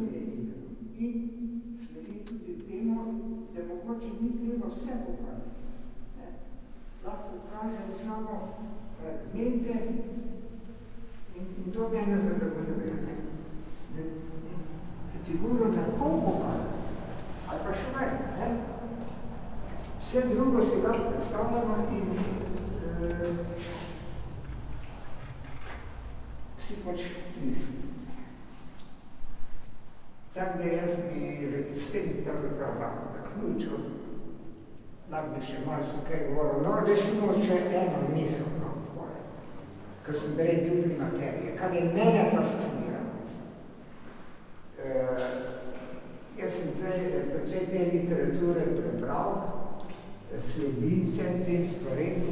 Špital chapel, Celje, Slovenia - tellings
Adolf Mlač telling his art ... chapel ambience